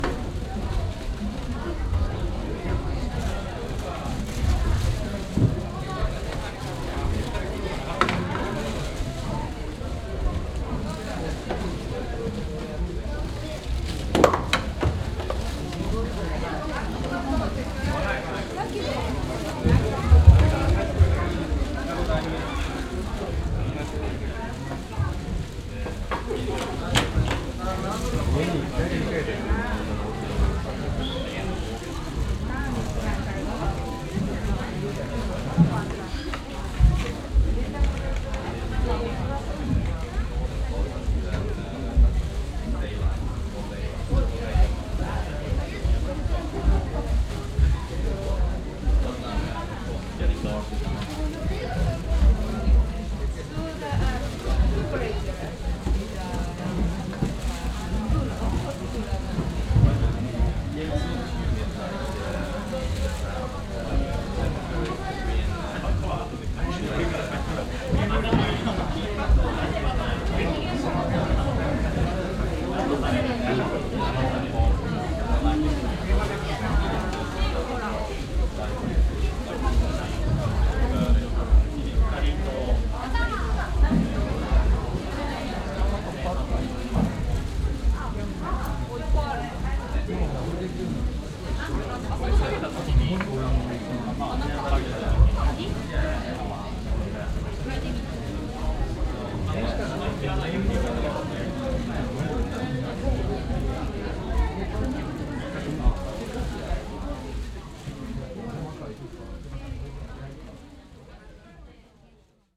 masumoto - inside castle
inside one of the last traditional wooden japanese castles. footsteps and voices in the crowded narrow walk ways.
international city scapes - social ambiences and topographic field recordings